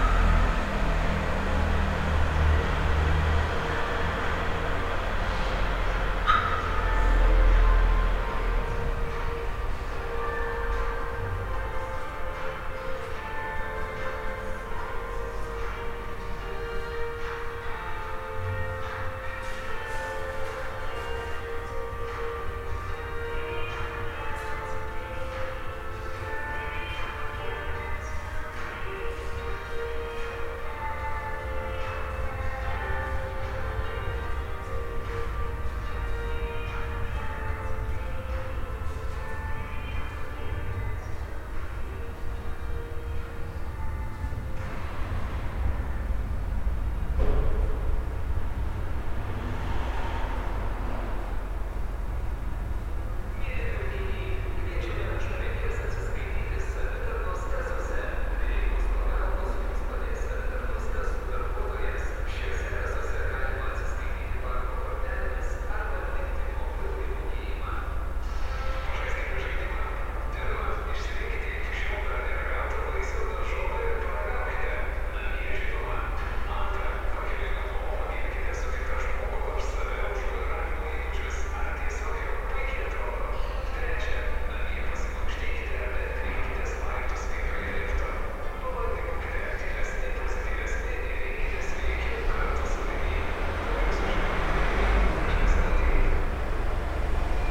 Underground carpark atmosphere underneath the Kaunas bus station. Cars driving around, a radio, and other sounds. Recorded with ZOOM H5.